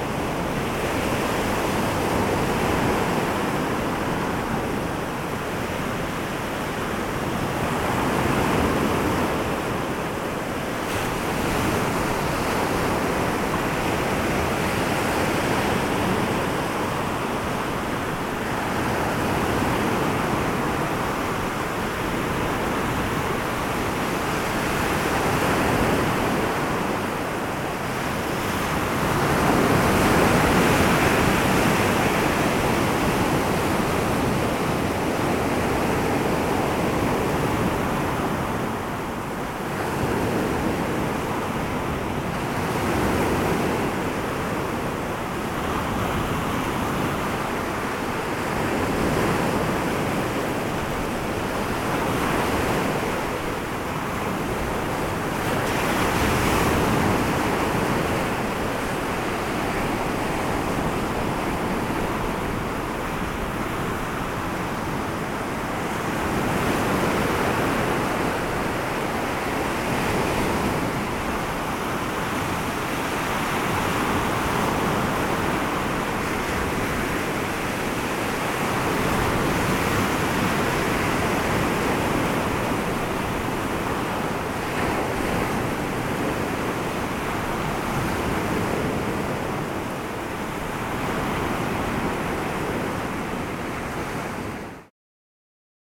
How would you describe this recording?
On the shore with some strong wind and breaking waves, freezing cold and no other people around. Binaural recording with Sennheiser Ambeo Smart Headset, flurry wind shield (like regular headphones), iPhone 8 plus, Voice Record Pro.